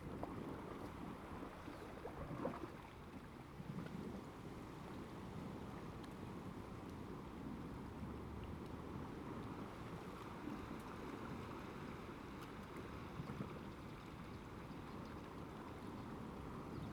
大福村, Hsiao Liouciou Island - Small pier
Waves and tides, Small pier
Zoom H2n MS+XY
Liuqiu Township, Pingtung County, Taiwan, 2 November